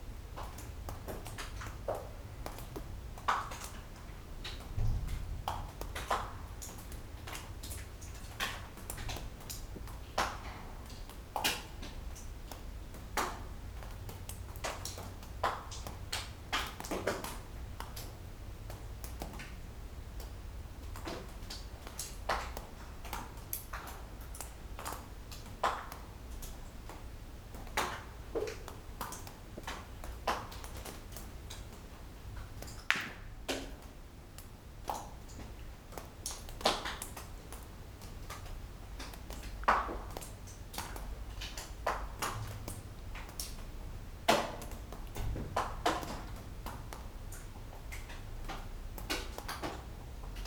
Maribor, adit, drops
old unused adit at river Drava in Maribor, dripping drops